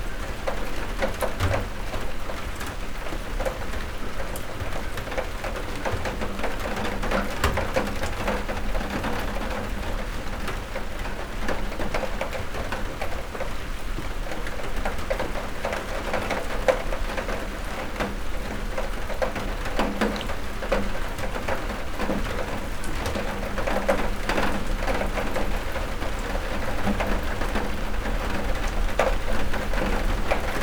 Heavy Rain, Malvern Wells, Worcestershire, UK - Rain Storm
From an overnight recording of rain on a horizontal metal door. Mix Pre 6 II with 2 x Sennheiser MKH 8020s.